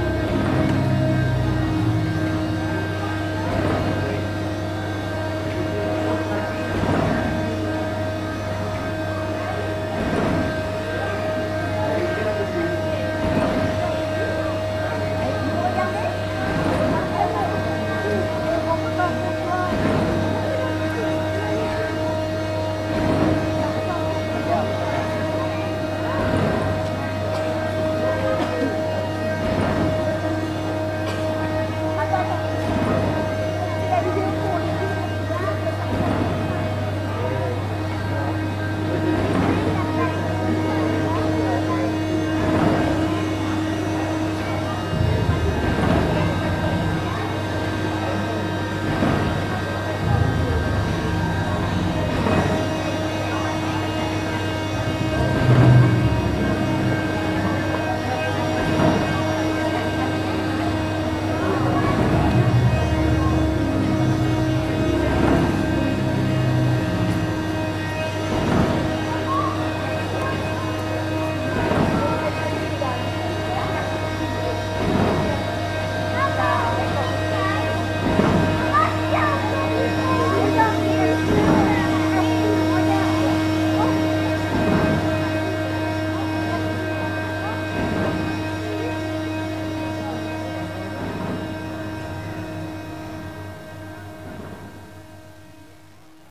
avenue de lAérodrome de, Toulouse, France - The Minotaur
The Minotaur
A moving architectural structure, the Minotaur is able to carry up to 50 people on its back for daily excursions in the Montaudran district. He gallops and rears, goes to sleep and handles objects. He incarnates life and provides a different perspective on places through his movements.
Captation : Zoom H4n
France métropolitaine, France, 30 May 2021